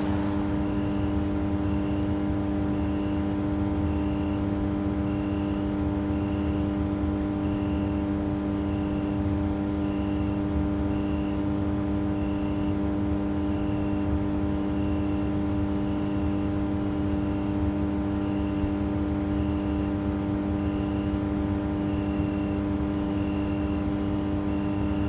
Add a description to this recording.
A very loud cricket and an electric transformer station for Lidl jamming in the night...